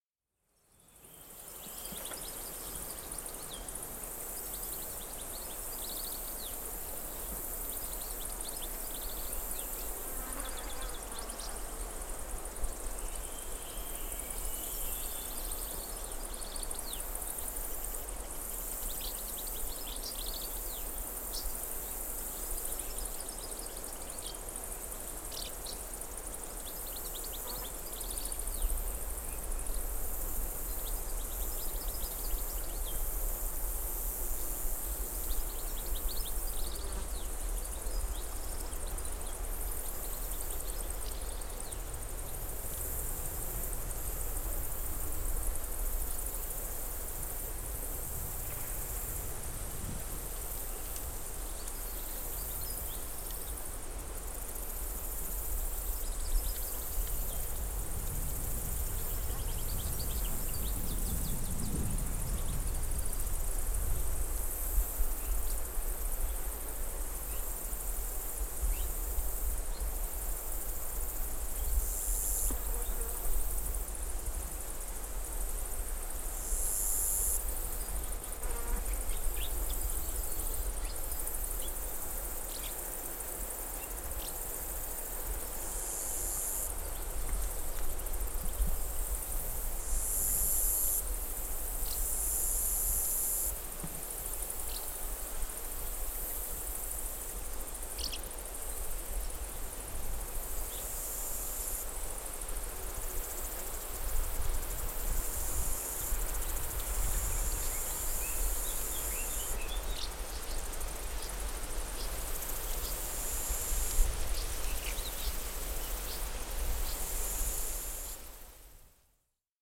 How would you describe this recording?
Arribas de Sao Joao, rio Douro. Mapa Sonoro do rio Douro. Cliffs in the Douro. Douro River Sound Map